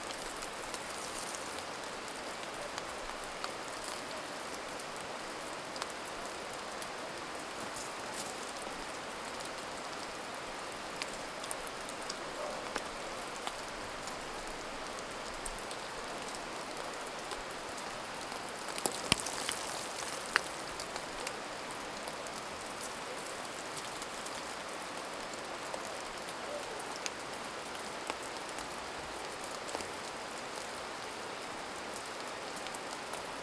{"title": "Červená, Kašperské Hory, Czechia - Sumava snow falling from trees", "date": "2019-01-16 09:10:00", "description": "Recording in Cervena in the Sumava National Park, Czech Republic. A winter's morning, snow slowly melting and falling from trees next to a small stream.", "latitude": "49.12", "longitude": "13.58", "altitude": "812", "timezone": "Europe/Prague"}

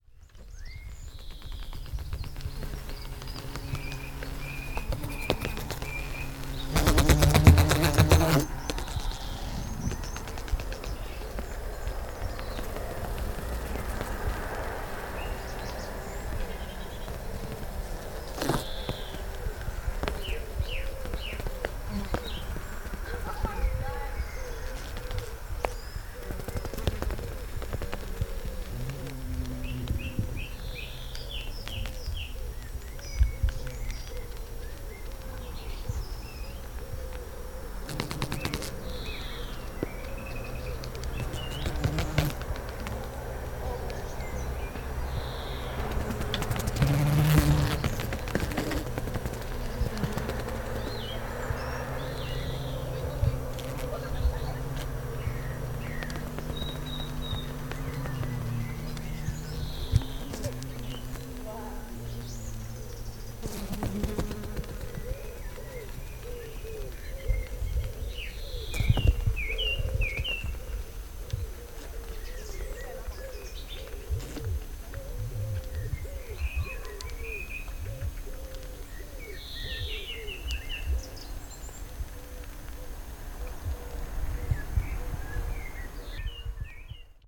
{"title": "Piddle Valley School - Trapped in the greenhouse", "date": "2015-07-15 17:00:00", "description": "Sounds recorded inside the school's greenhouse. Children playing football in the background.\nRecorded using an H4N zoom recorder and NTG2 Rode microphone.\nSounds in Nature workshop run by Gabrielle Fry.", "latitude": "50.79", "longitude": "-2.42", "altitude": "97", "timezone": "Europe/London"}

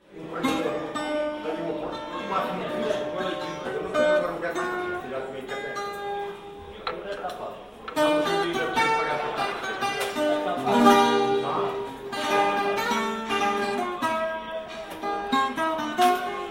{"title": "1Morais, Macedo de Cavaleiros, PT.Old man (Jaime Martinez) play portuguese guitar (A.Mainenti)", "latitude": "41.49", "longitude": "-6.77", "altitude": "616", "timezone": "Europe/Berlin"}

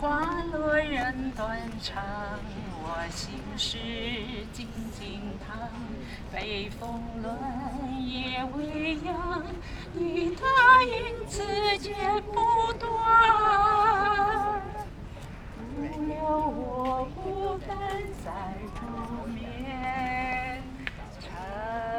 {"title": "碧湖公園, Taipei City - Poet and the public", "date": "2014-08-03 10:49:00", "description": "Poet and the public, Recite poetry\nZoom H6 XY + Rode NT4", "latitude": "25.08", "longitude": "121.58", "altitude": "20", "timezone": "Asia/Taipei"}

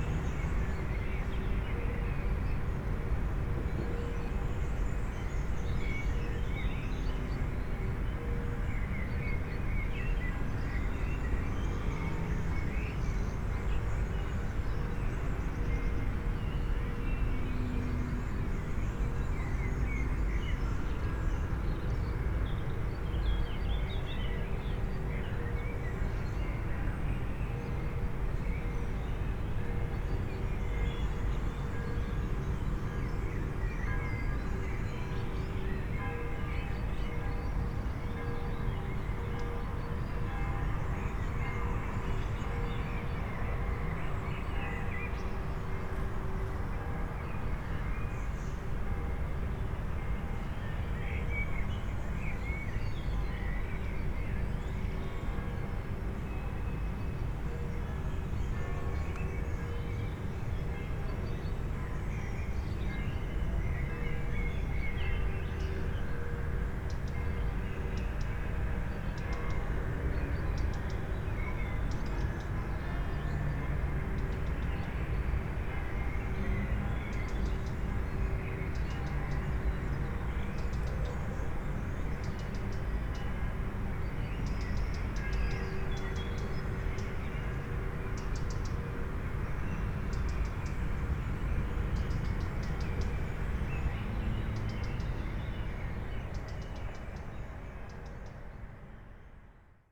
{"title": "Hotel Parc Belle Vue, Luxemburg - open window, evening ambience", "date": "2014-07-05 20:00:00", "description": "at the open window, second floor, Hotel Parc Belle Vue, Luxembourg. Birds, distant city sounds, some bells can be heard, and a constant traffic hum.\n(Olympus LS5, Primo EM172)", "latitude": "49.61", "longitude": "6.12", "altitude": "289", "timezone": "Europe/Luxembourg"}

